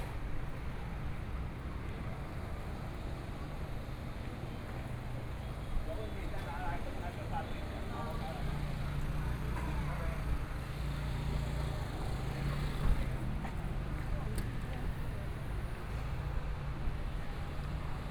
左營區果貿里, Kaohsiung City - Walking in the community
Walking in the collection of residential communities, Birdsong, Traffic Sound, The weather is very hot, Traveling by train